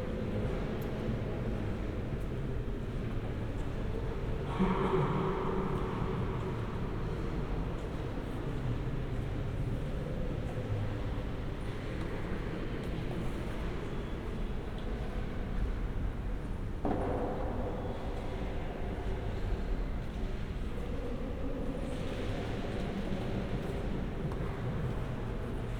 mainz: dom - the city, the country & me: mainz cathedral
inside the cathedral (with six seconds reverberation), voices and steps
the city, the country & me: september 26, 2013